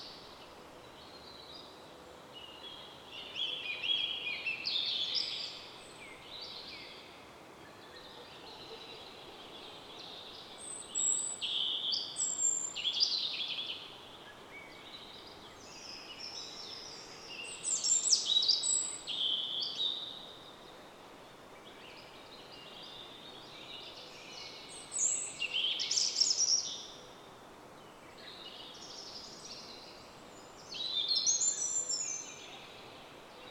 {"title": "morning birds, Ahja river valley", "date": "2010-06-08 01:55:00", "description": "early birds on the Ahja river", "latitude": "58.14", "longitude": "27.03", "altitude": "65", "timezone": "Europe/Tallinn"}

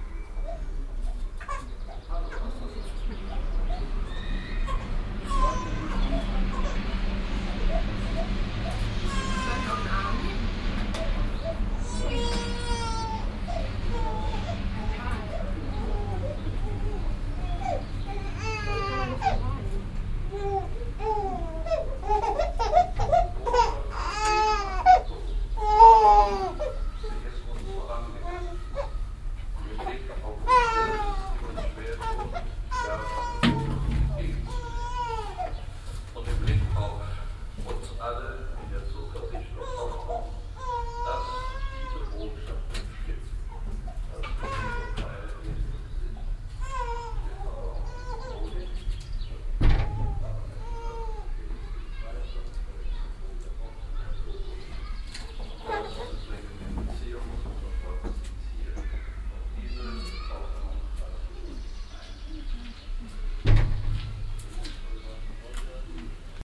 {"title": "cologne, stadtgarten, kirche, eingang - cologne, stadtgarten, kirche, eingang", "date": "2008-05-07 21:32:00", "description": "trauergottesdienst und zu spät kommende gäste\nstereofeldaufnahmen im mai 08 - morgens\nproject: klang raum garten/ sound in public spaces - in & outdoor nearfield recordings", "latitude": "50.95", "longitude": "6.94", "altitude": "52", "timezone": "Europe/Berlin"}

